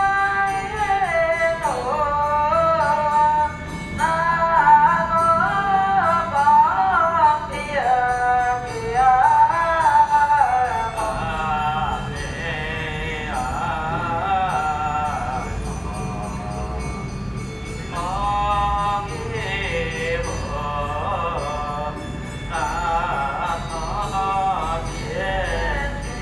Wenhua Rd., Yingge Dist., New Taipei City - Traditional temple rituals
Traditional temple rituals, In the square in front of the temple
Zoom H4n XY+Rode NT4
New Taipei City, Taiwan